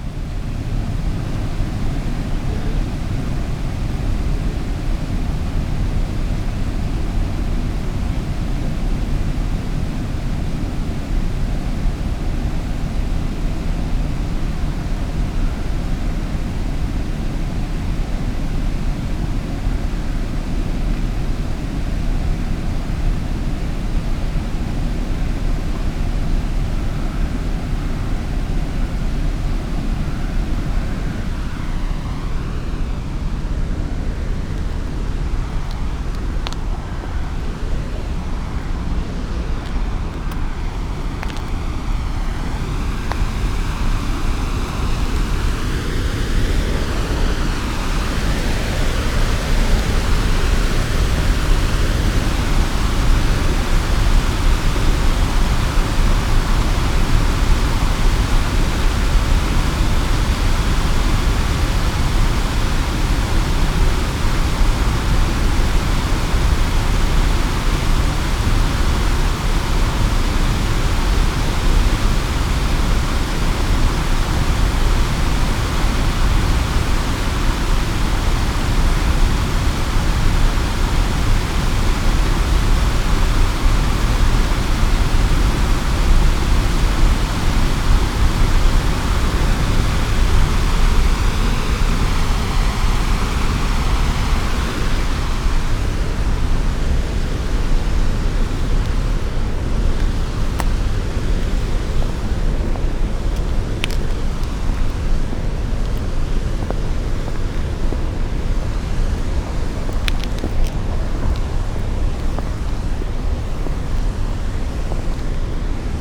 river Spree canal, Unterwasserstraße, Berlin, Germany - night, water fall, walking
river flows in the opposite direction here, night crows
Sonopoetic paths Berlin
3 September